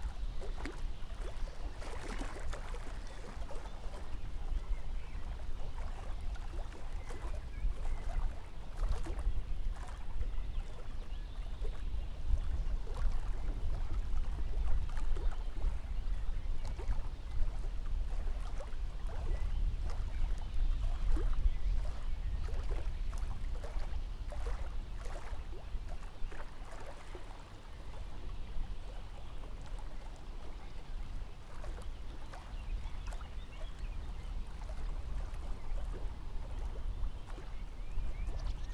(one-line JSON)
{"title": "Unnamed Road, Weisweil, Deutschland - Plätschern am Rhein", "date": "2019-05-08 10:31:00", "description": "Sanftes Plätschern des Rhein.", "latitude": "48.22", "longitude": "7.66", "altitude": "164", "timezone": "Europe/Berlin"}